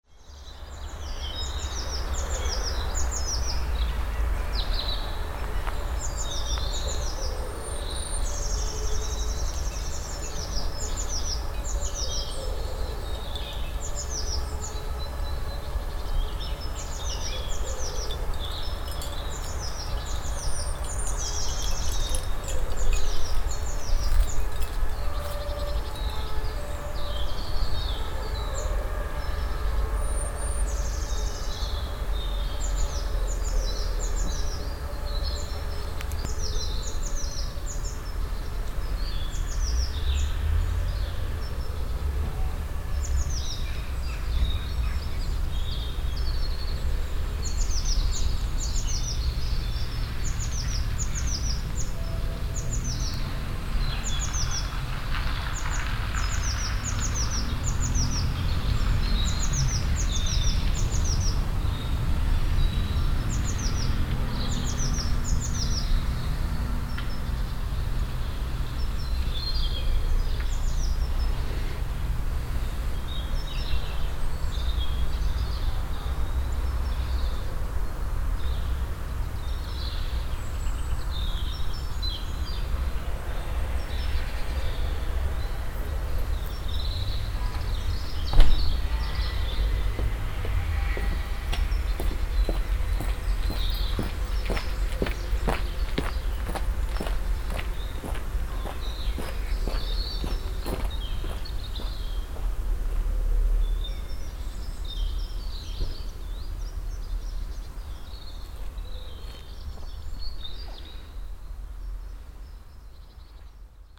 hamm, haus kentrop
nearby a small lake in a samm private park area on a sunny morning. cars passing by some steps on the stoney passway
soundmap new - social ambiences and topographic field recordings